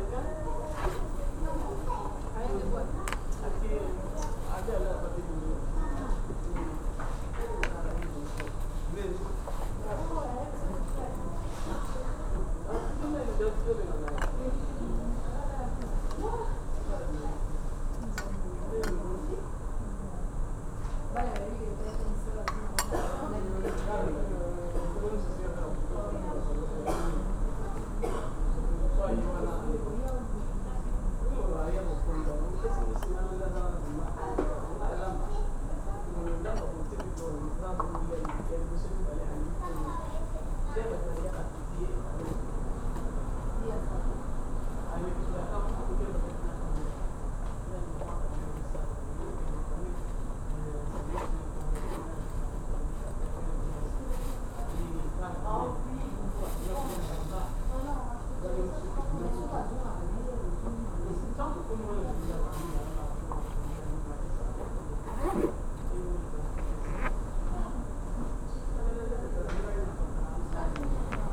waiting room, gare de l´est
gare de l´est, waiting room, warteraum